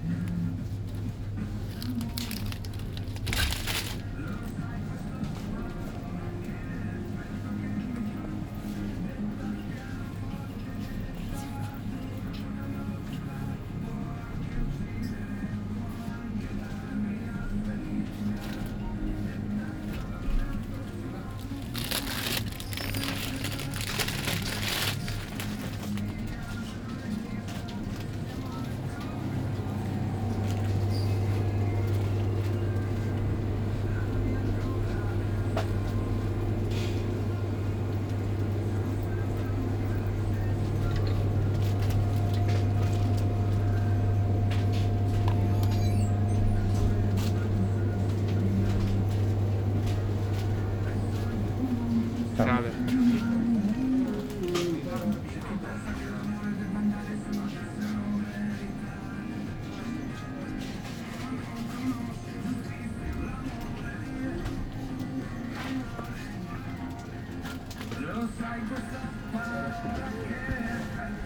"Autumn shopping afternoon in the time of COVID19": Soundwalk
Chapter CXLV of Ascolto il tuo cuore, città. I listen to your heart, city
Monday December 7th 2020. Short walk and shopping in the supermarket at Piazza Madama Cristina, district of San Salvario, Turin more then four weeks of new restrictive disposition due to the epidemic of COVID-19.
Start at 4:37 p.m., end at h. 5:17 p.m. duration of recording 40’01”''
The entire path is associated with a synchronized GPS track recorded in the (kml, gpx, kmz) files downloadable here:
7 December 2020, ~17:00